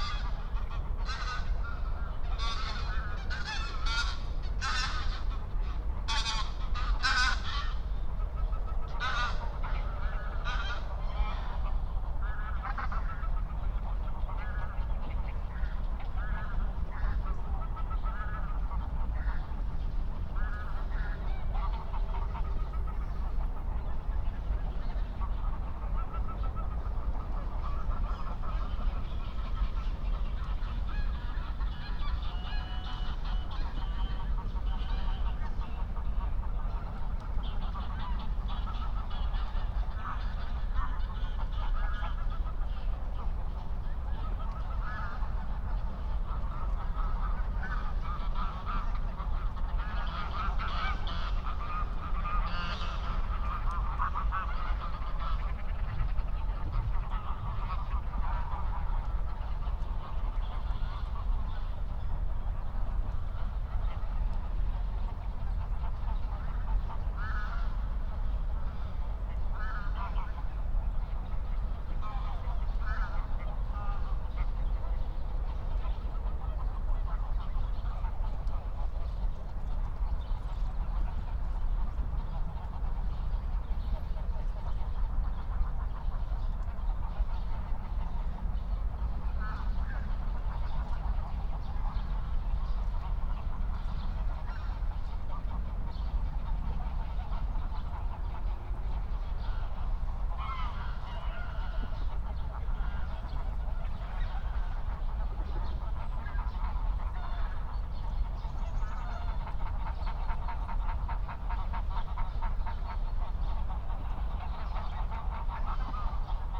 07:00 Berlin, Buch, Moorlinse - pond, wetland ambience
Deutschland, 4 October 2021